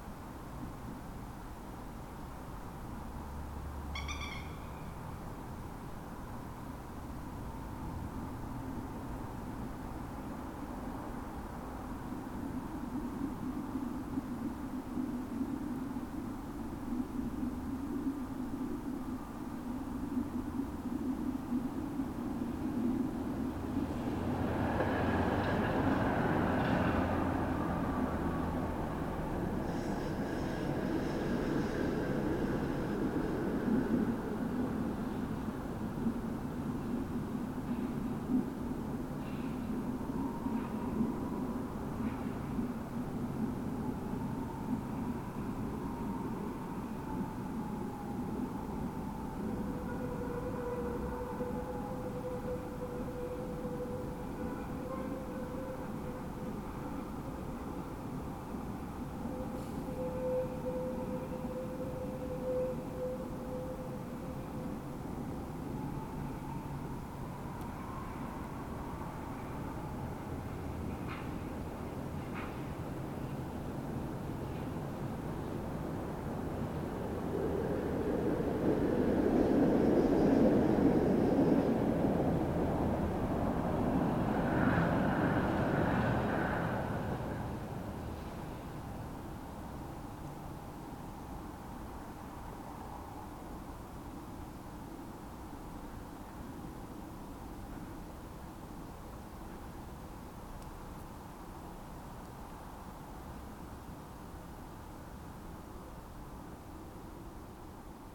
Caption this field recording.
I recently went out of my apartment into the streets of an unknown city; one largely without people. Each year, Prague welcomes millions of visitors. They swarm the attractions, they choke the streets. They guzzle the beer and drop wads of cash on tasteless trifles. Some swoon at the complete Baroqueness of the city; some leave grafitti on the precious monuments. Sometimes, they carelessly laugh at things taken seriously by Praguers, and sometimes they stand in awe at things the locals find banal. Today, it is as if a tornado has come and swept them all away. Not just the visitors, but the locals, too. By government decree, beginning at midnight 16 March, 2020, anyone without a valid reason to be out in public must stay at home. It is for our own safety, and the safety of others. The evening before that, I went out one last time before the curtain is drawn. The planet Venus, bright enough to cast a shadow, hangs in the northern sky.